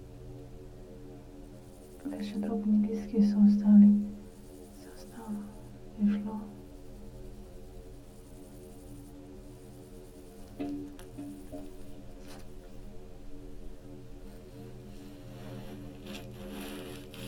quarry, Marušići, Croatia - void voices - stony chambers of exploitation - borehole
sand and tiny stones, leaves, few words, breath and voices of a borehole